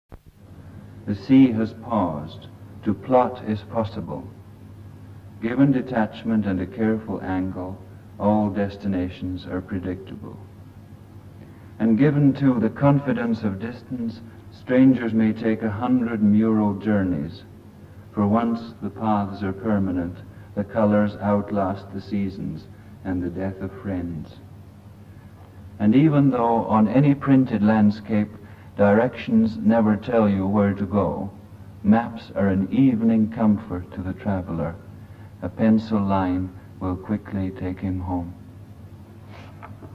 radio aporee - a pencil line
fragment, im müll gefunden: given detachment and a careful angle, all destinations are predictable (...) and even though on any printed landscape, directions never tell you where to go, maps are an evening comfort to the traveller, a pencil line could quickly take him home 06.12.2006 21:22:26